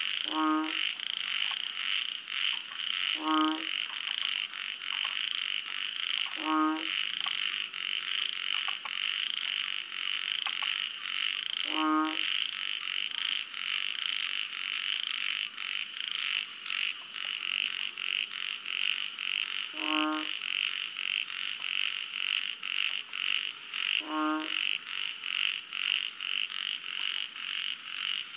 南投縣草屯鎮虎威巷, Tsaotun county, Nanto, Taiwan. - Chorus of three species of frogs
Chorus of three species of frogs, Microhyla fissipes, Polypedates braueri and Lithobates catesbeiana, recorded in a countryside road near a Lichi fruit plantation, at the elevation of 100m.